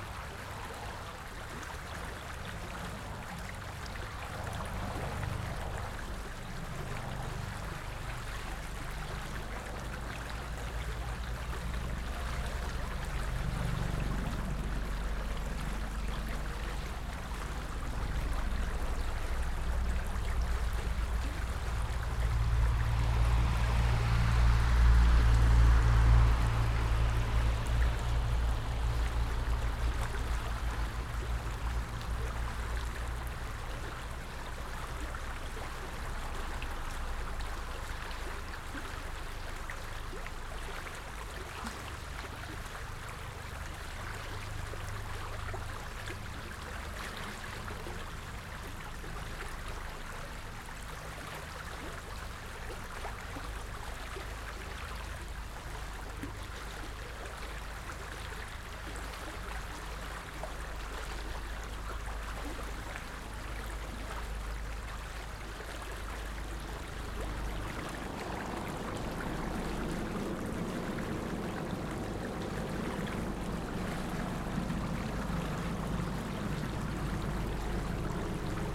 Bahnhofstraße, Bad Berka, Deutschland - Flight Over Stream - Binaural
*Binaural - Please wear headpones.
Flight over a stream in a small city in Germany called Bad Berka..
In the sound: Helicopter engine appearing in the left channel and disappearing in the right channel. Gentle splashes and laps of the stream serve as baseline of the soundscape.
A car engine passes by in the left channel.
Gear: LOM MikroUsi Pro built into binaural encoder and paired with ZOOM F4 Field Recorder.
Thüringen, Deutschland, 6 May 2021, 00:08